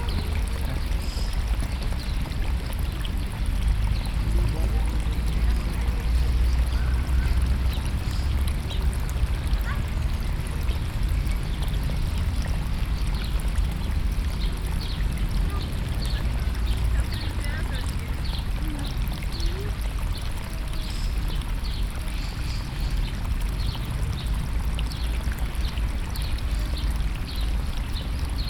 Norway, Oslo, Botanisk hage, water, The University Botanical Garden at Tøyen in Oslo is Norways oldest botanical garden, established in 1814. It is administrated by the University of Oslo.
The University of Oslos oldest building, the Tøyen Manor which was given as a gift in 1812, is located in the garden. The garden originally covered 75,000 square metres, but has since doubled in size. The collection includes roughly 35,000 plants of about 7500 unique species., binaural